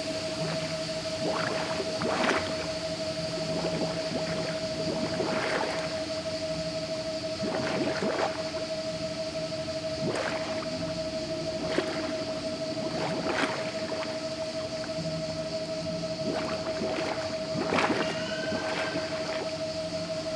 Saintes-Maries-de-la-Mer, Frankreich - Château d'Avignon en Camargue - Water bubbling in the canal, 'Le domaine des murmures # 1'
Château d'Avignon en Camargue - Water bubbling in the canal, 'Le domaine des murmures # 1'.
From July, 19th, to Octobre, 19th in 2014, there is a pretty fine sound art exhibiton at the Château d'Avignon en Camargue. Titled 'Le domaine des murmures # 1', several site-specific sound works turn the parc and some of the outbuildings into a pulsating soundscape. Visitors are invited to explore the works of twelve different artists.
In this particular recording, you will hear the sound of water bubbling in one of the canals, the drone of the water pump from the machine hall nearby, the chatter and laughter of some Italian visitors as well as the sonic contributions of several unidentified crickets, and, in the distance, perhaps some sounds from art works by Julien Clauss, Emma Dusong, Arno Fabre, Franck Lesbros, and, last but not least, the total absence of sound from a silent installation by Emmanuel Lagarrigue in the machine hall.
[Hi-MD-recorder Sony MZ-NH900, Beyerdynamic MCE 82]
Saintes-Maries-de-la-Mer, France